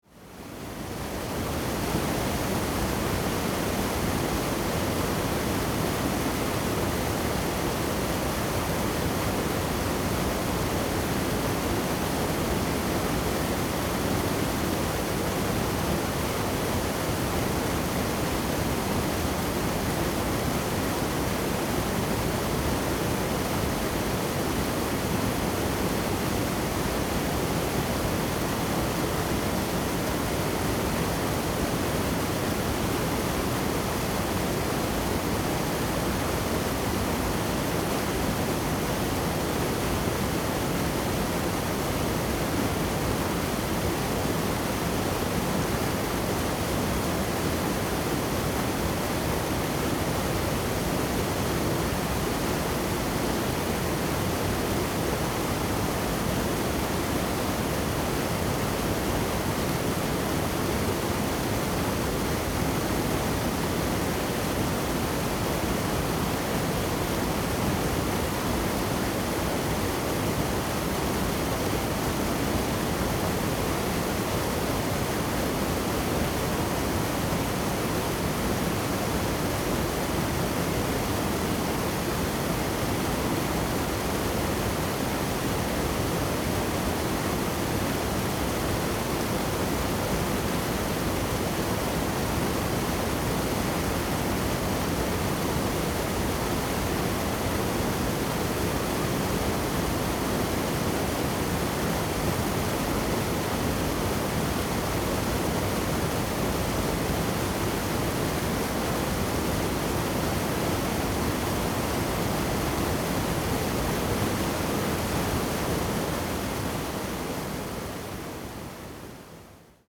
Farmland irrigation waterways
Zoom H2n MS+XY